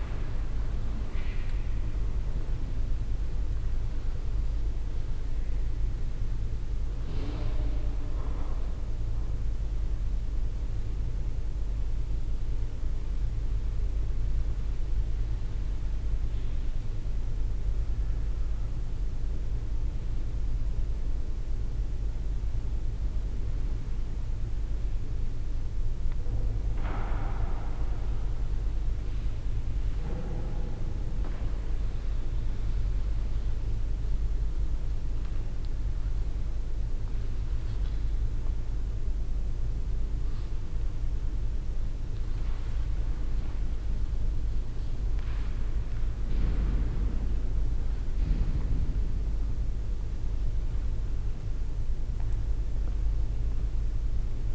dortmund, reinoldi church, main church hall - dortmund, reinoldi kirche, church hall
inside the church hall in the early afternoon. silent movemnts of visitors inside - outside the traffic of the shopping mall
soundmap nrw - social ambiences and topographic field recordings
reinoldi kirche, ostenhellweg